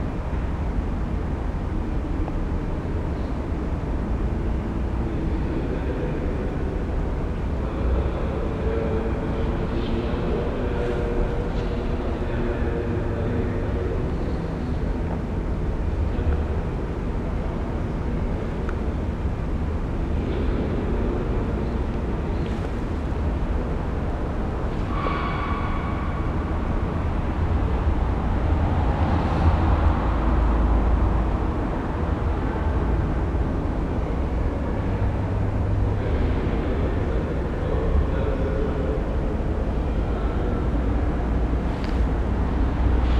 Unterbilk, Düsseldorf, Deutschland - Düsseldorf, Zollhof 11
Inside the wide, high and open, glass, steel and stone architecture. The ventilation, voices and the reverbing sounds of steps and doors in the central hall of the building.
This recording is part of the exhibition project - sonic states
This recording is part of the exhibition project - sonic states
soundmap nrw - sonic states, social ambiences, art places and topographic field recordings